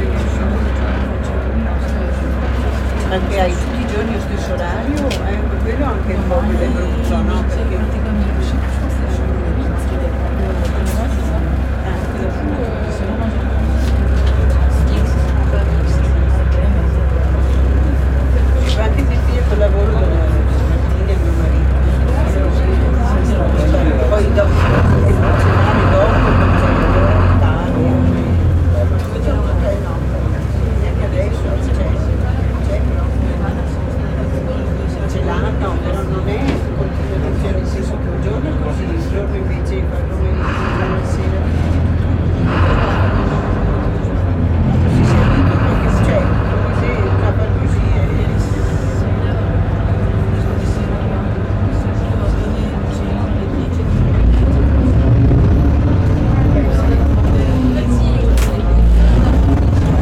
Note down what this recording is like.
Onboard the vaporetto in Venezia, recorded with Zoom H6